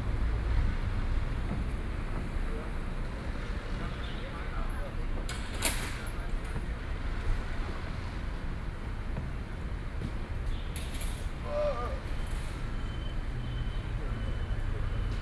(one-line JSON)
{"title": "群賢公園, Taipei city - Sitting next to the park", "date": "2012-11-12 13:56:00", "latitude": "25.03", "longitude": "121.55", "altitude": "20", "timezone": "Asia/Taipei"}